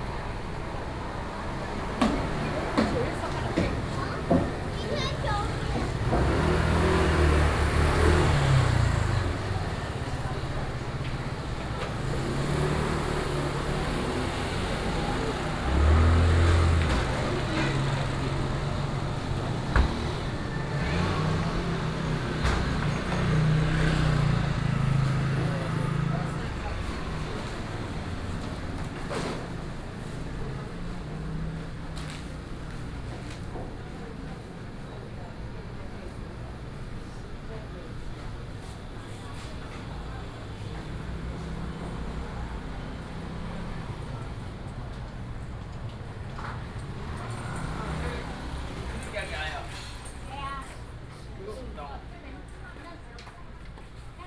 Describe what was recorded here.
Walking in a small alley, Traffic Sound